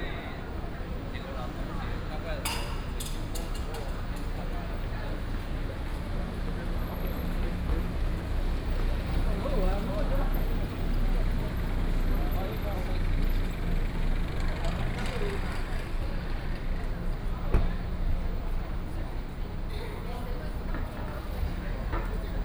Lane, Sec., Ren’ai Rd., Da'an Dist. - walking in the Street
Walking at night in a small alley